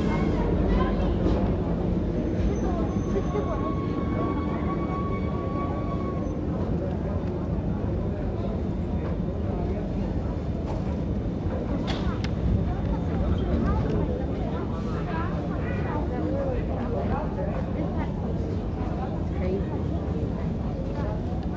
Ermou, Athina, Greece - Monastiraki Sounds
Sounds located at the heart of Athens, during a Friday night, when the night life is just beginning.